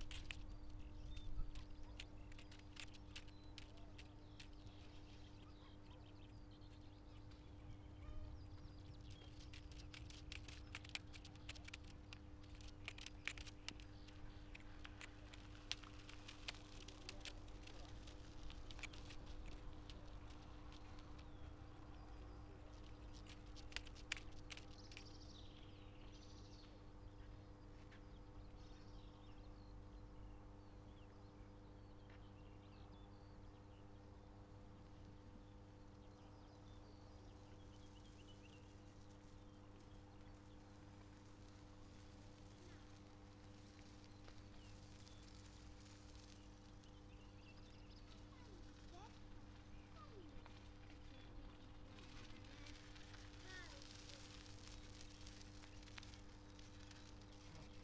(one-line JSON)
{"title": "Poznan outskirts, Morasko Campus area - plastic strap", "date": "2013-04-14 11:20:00", "description": "a loose strp of plastic tape jigling in the air, buzz of power transformer in the backgroud, voices of the sunday strollers", "latitude": "52.47", "longitude": "16.92", "altitude": "93", "timezone": "Europe/Warsaw"}